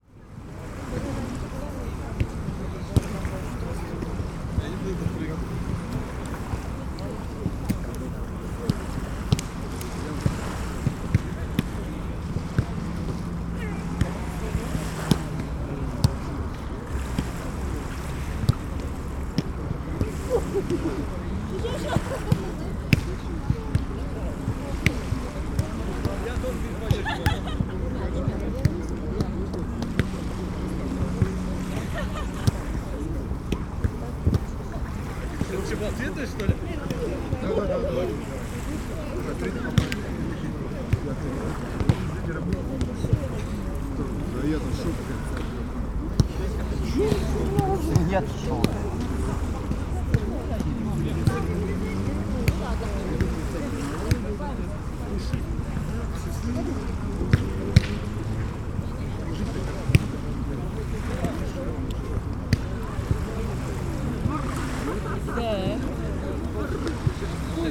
recording from the Sonic Surveys of Tallinn workshop, May 2010